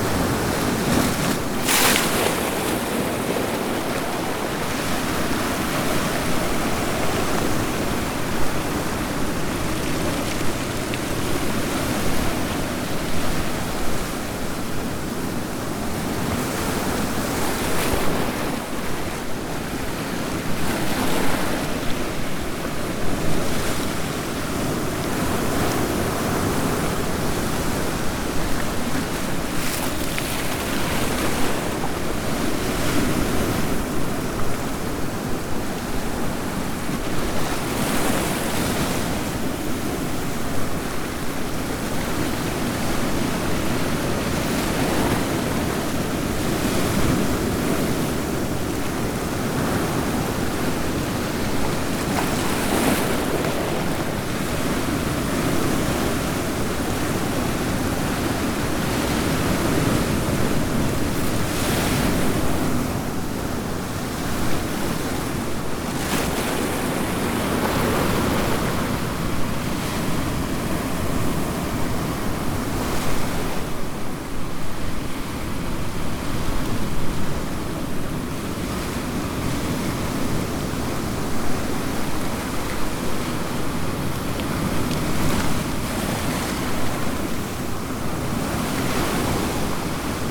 Sound of waves as the tide comes in.